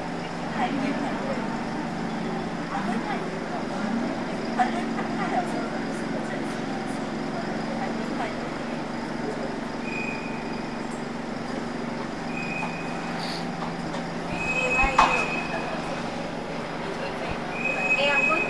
MRT Expo stn, Singapore
On the train to Changi Airpot, MRT